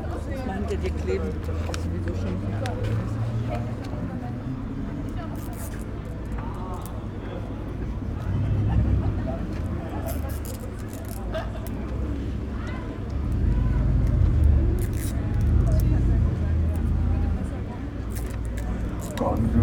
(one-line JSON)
{"title": "Berlin, Möbel Olfe - möbel olfe, friday night", "date": "2009-05-29 22:00:00", "description": "29.05.2009 vor dem club möbel olfe, frau klebt plakate, folklore-band probt im hintergrund\nin front of pub möbel olfe, woman sticks a bill, folk band rehearsal in the background", "latitude": "52.50", "longitude": "13.42", "altitude": "40", "timezone": "Europe/Berlin"}